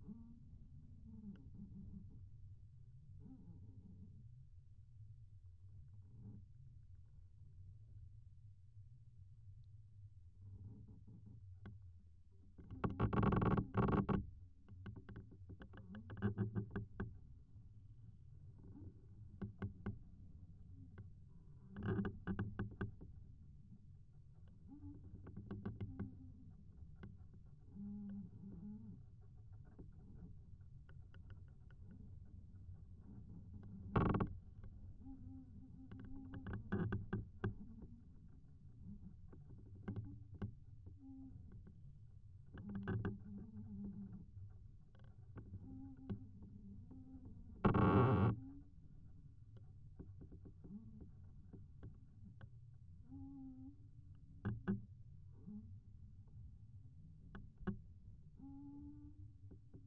contact microphones on a branch of tree rubing to other tree in a wind